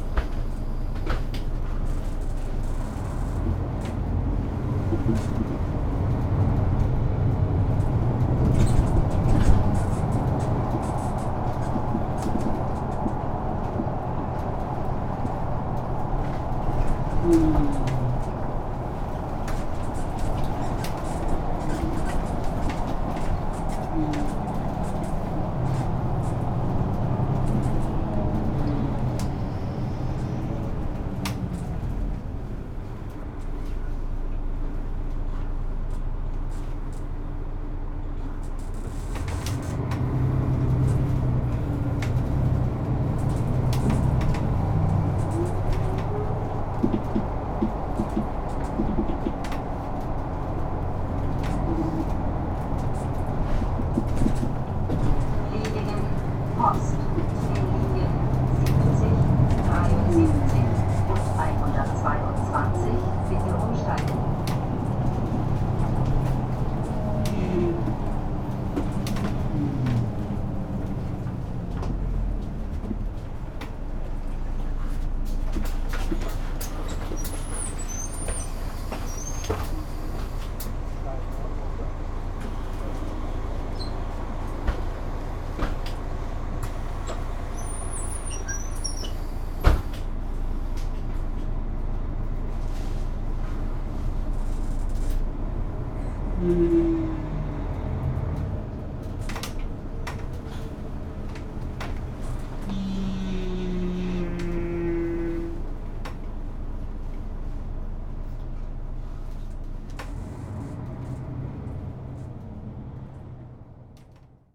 {"title": "Plieningen, Stuttgart - Urbanes 131205 Buslinie 74", "date": "2013-12-05 11:00:00", "description": "Bus ride to Stuttgart\nSony PCM D50", "latitude": "48.70", "longitude": "9.21", "altitude": "363", "timezone": "Europe/Berlin"}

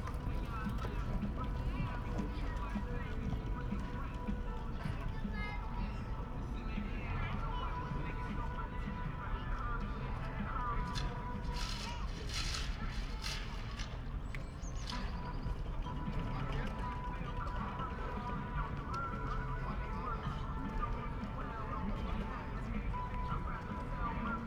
{"title": "Helsingforser Str., Berlin - square ambience", "date": "2019-02-16 13:25:00", "description": "Berlin Friedrichshain, square ambience in the neighbourhood of an urban gardening project, warm and sunny late winter early afternoon\n(Sony PCM D50, Primo EM172)", "latitude": "52.51", "longitude": "13.45", "altitude": "38", "timezone": "GMT+1"}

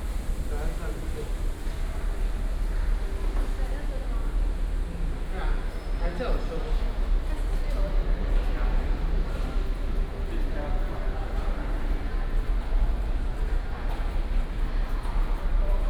{"title": "建國路, Central Dist., Taichung City - Walking in the underpass", "date": "2016-09-06 17:44:00", "description": "Walking in the underpass, Traffic Sound, Air conditioning noise, Footsteps", "latitude": "24.14", "longitude": "120.68", "altitude": "84", "timezone": "Asia/Taipei"}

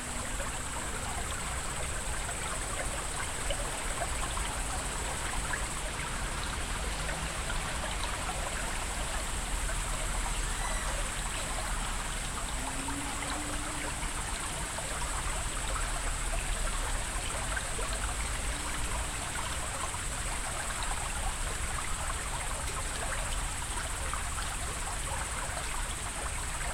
{"title": "Mizarai, Lithuania, evening", "date": "2022-09-08 18:50:00", "description": "At little river", "latitude": "54.02", "longitude": "23.93", "altitude": "95", "timezone": "Europe/Vilnius"}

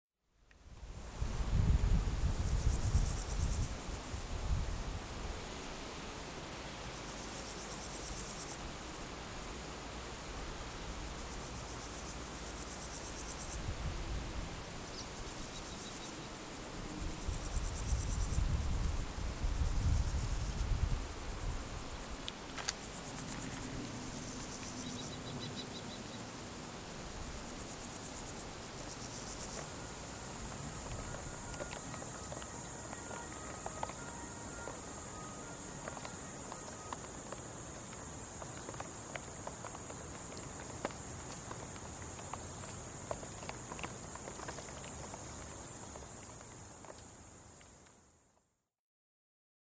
{"title": "Südheide former Soviet military base", "date": "2010-07-14 12:07:00", "description": "Former soviet base, Halle-Neustadt, empty, landscaping, redevelopment, Background Listening Post, DDR", "latitude": "51.49", "longitude": "11.94", "altitude": "76", "timezone": "Europe/Berlin"}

